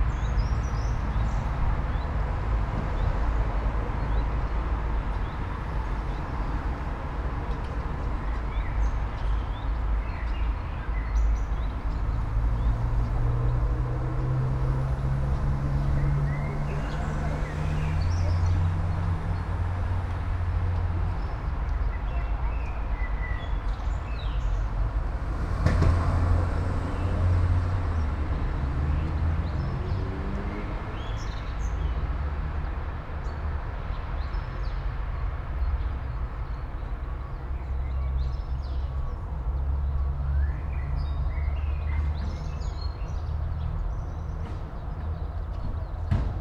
all the mornings of the ... - apr 25 2013 thu
25 April 2013, ~07:00